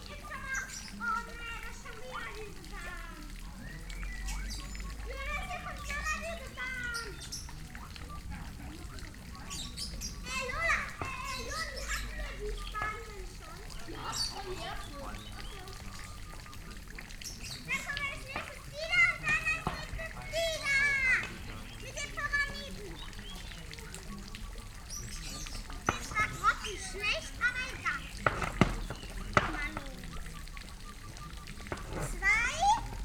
Sunday early afternoon, outside restaurant ambience, kids playing
(Sony PCM D50, DPA4060)